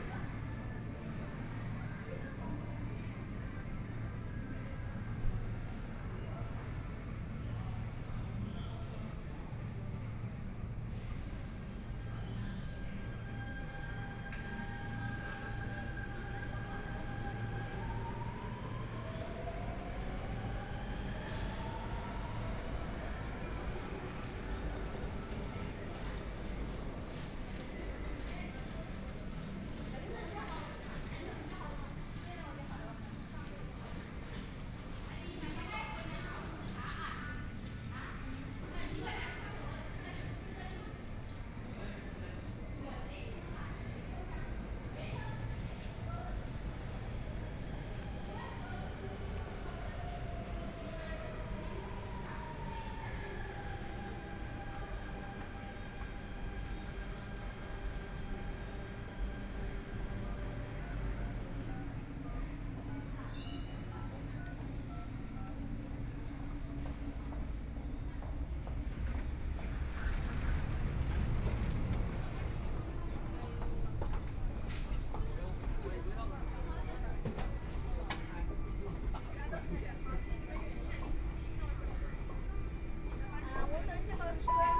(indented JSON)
{
  "title": "Shanghai, China - In the subway",
  "date": "2013-11-21 16:12:00",
  "description": "walking in the Yuyuan Garden station, from Yuyuan Garden Station to East Nanjing Road Station, Binaural recording, Zoom H6+ Soundman OKM II",
  "latitude": "31.24",
  "longitude": "121.48",
  "altitude": "6",
  "timezone": "Asia/Shanghai"
}